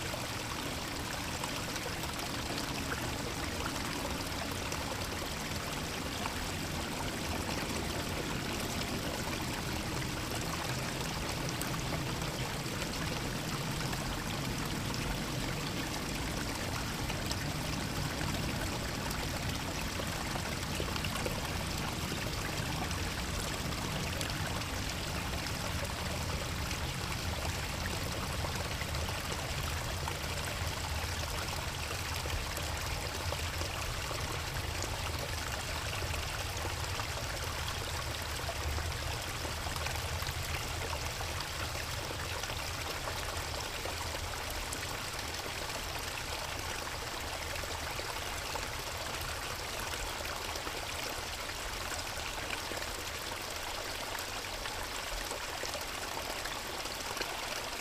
Sibley creek, spring 2009
.... with constant air traffic overhead
Oakland, California, 2 March 2009